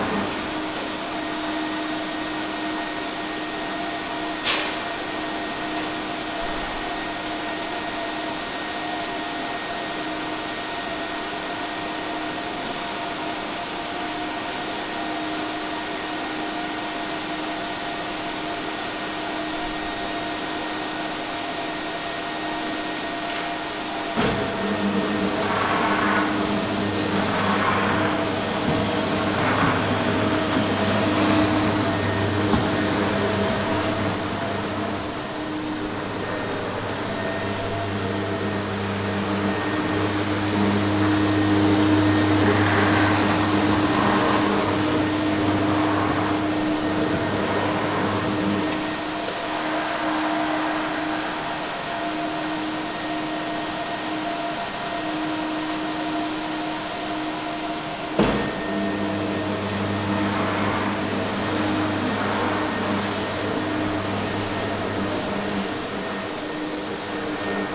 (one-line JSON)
{"title": "Poznan, Morasko University Campus - floor cleaner", "date": "2012-09-20 12:20:00", "description": "a guy cleaning the corridor floor with an electric machine.", "latitude": "52.47", "longitude": "16.92", "altitude": "94", "timezone": "Europe/Warsaw"}